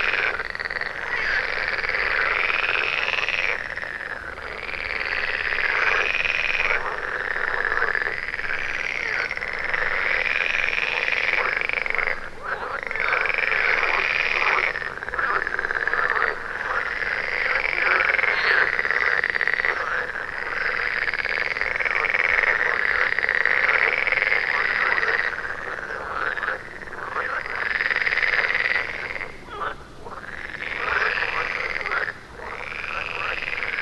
Krugteich, Kiel, Deutschland - Frog concert
Hundreds of frogs in a pond ribbit loudly at night. Zoom F4 recorder, Røde NTG2, Blimp and DeadWombat windshield.
Kiel, Germany, 18 June 2017, 02:05